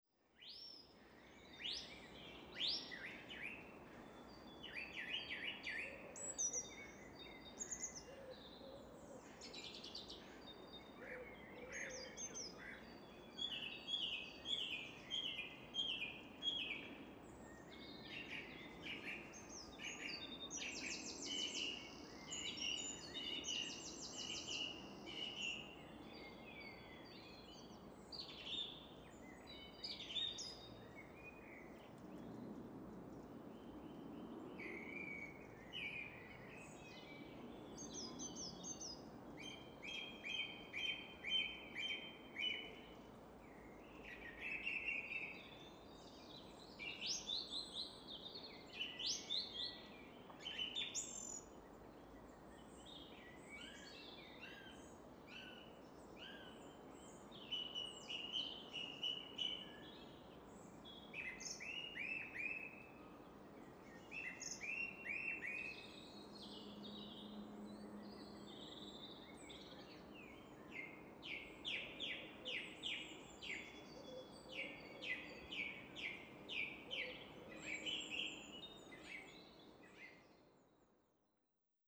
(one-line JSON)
{"title": "Sydenhamm Hill wood - Sydenhamm Hill wood SE26", "date": "2010-04-16 15:52:00", "description": "Recorder during the flypath closure week due to the ash cloud.\nRecorder: Edirol R4 Pro\nMicrophones: Oktava MK-012 in Bluround® setup", "latitude": "51.44", "longitude": "-0.07", "altitude": "92", "timezone": "Europe/London"}